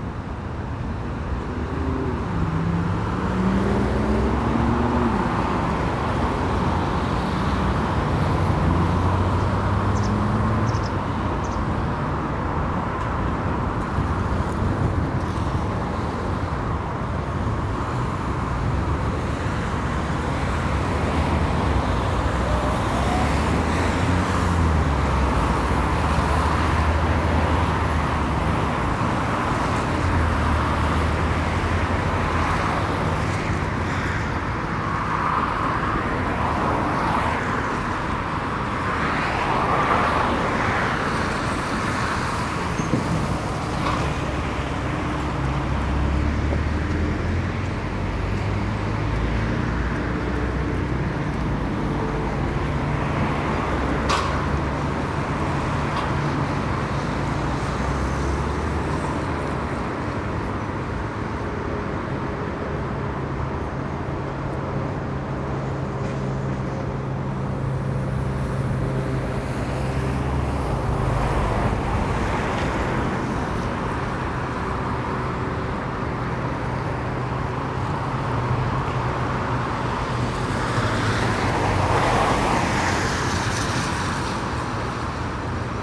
The Drive Moor Place Woodlands Oaklands Avenue Oaklands Grandstand Road Town Moor
By the gate
that sounds the runners passing by
In a puddle
grey leaves slowly turning to soil
A gull performs its rain dance
tricking worms to the surface
Traffic